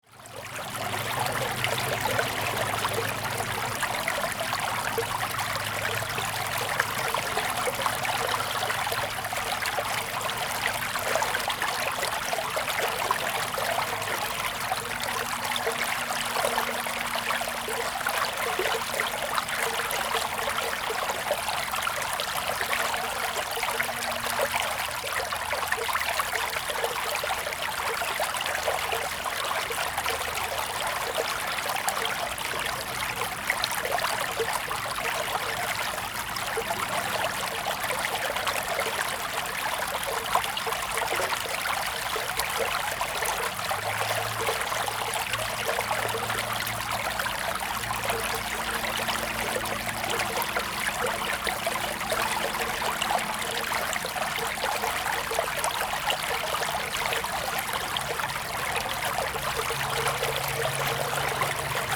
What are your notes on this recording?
Agricultural irrigation channels, Zoom H2n MS+XY